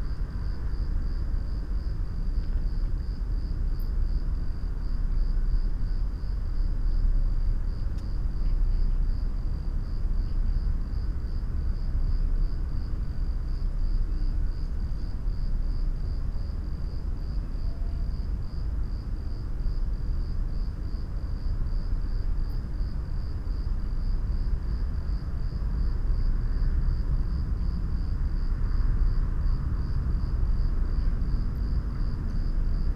Punto Franco Vecchio, Trieste, Italy - not so quiet night
early september night sounds in old Trieste free port, crickets, bats ...